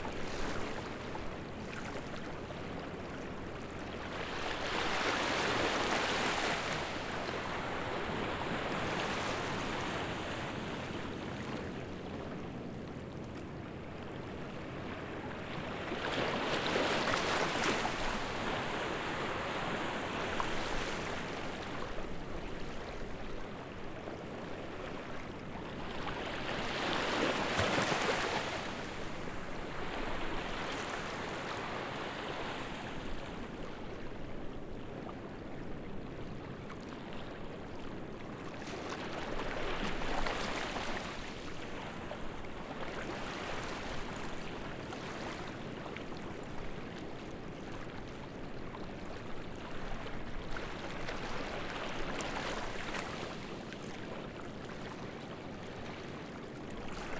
Ziyaaraiy Magu Road, Maafushi, Мальдивы - Ocean
A wonderful Sunny day on an island in the middle of the Indian ocean. Sound recorded on a portable Zoom h4n recorder
Maafushi, Maldives, 17 March 2013